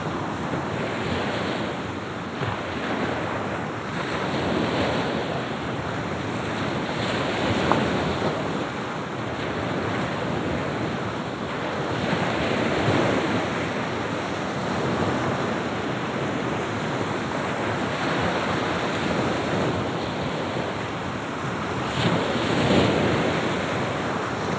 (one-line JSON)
{"title": "Cape Sosnovyy Navolok. Sea waves. Russia, The White Sea - Cape Sosnovyy Navolok. Sea waves.", "date": "2015-06-21 22:40:00", "description": "Cape Sosnovyy Navolok. Sea waves.\nМыс Сосновый Наволок. Морские волны.", "latitude": "63.91", "longitude": "36.92", "timezone": "Europe/Moscow"}